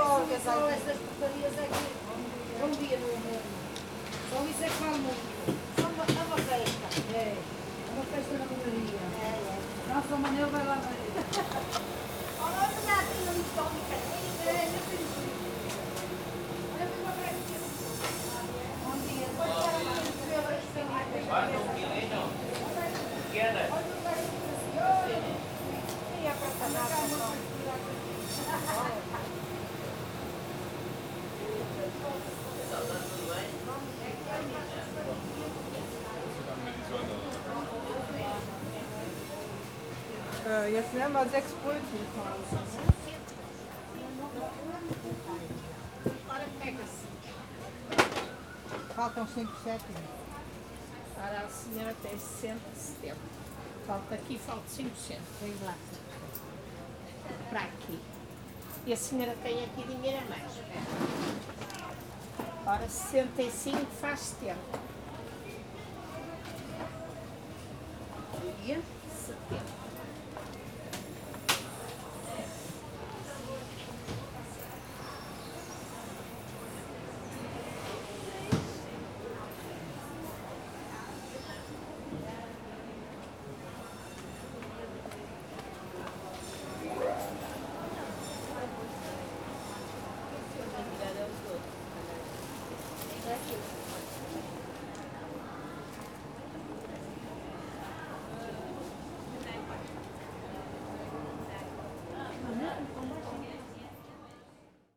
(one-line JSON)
{"title": "Porto, Mercado do Bolhão - conversations at the market", "date": "2013-09-30 10:01:00", "description": "a few vendors met at the center of the market to talk about something. a short walk around the stalls. swish of meat slicing machine. German couple shopping for groceries.", "latitude": "41.15", "longitude": "-8.61", "altitude": "90", "timezone": "Europe/Lisbon"}